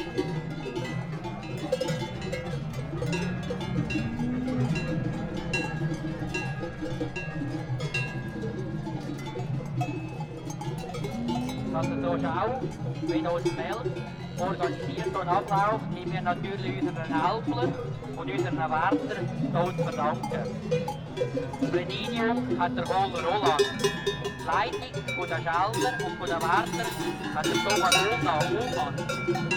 Mels, Schweiz - Viehmarkt
Kuhglocken, aufgeregtes Vieh, Ansagen, Stimmen
Oktober 1999